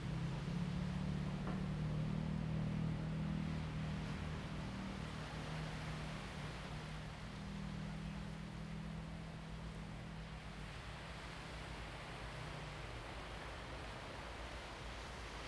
南福村, Hsiao Liouciou Island - Small village
Small village, Park in front of the village, Traffic Sound, Sound of the waves
Zoom H2n MS+XY
Pingtung County, Taiwan